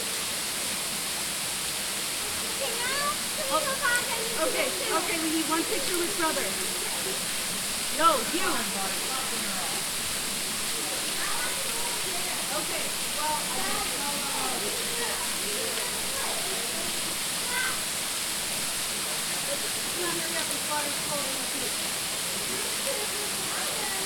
Unnamed Road, Dodgeville, WI, USA - Saturday at Stephens Falls
Families taking pictures and playing in the water underneath Stephens' Falls in Governor Dodge State Park. Recorded with a Tascam DR-40 Linear PCM Recorder.